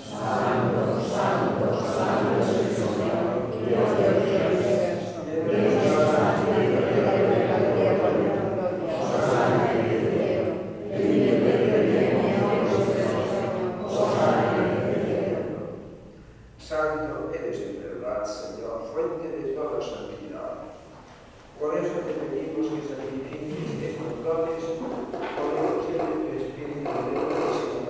Eucharistic celebration of the Roman Catholic Church on a conventional monday.
C/ BON PASTOR, Barcelona, Spain